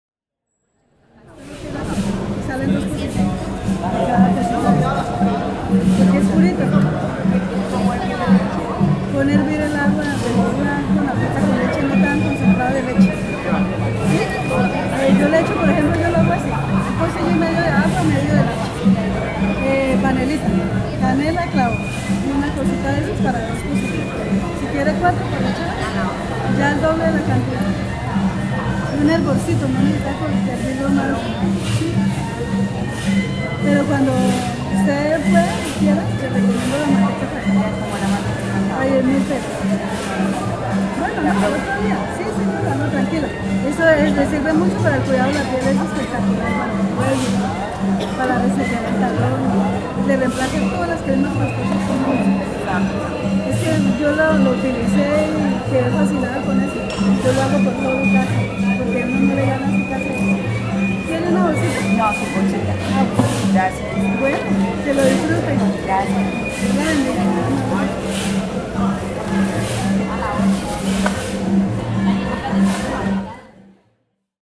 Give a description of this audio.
Ambientes Sonoros en los Mercados Campesinos que tienen lugar cada quince días en el polideportivo del barrio La Esperanza séptima etapa.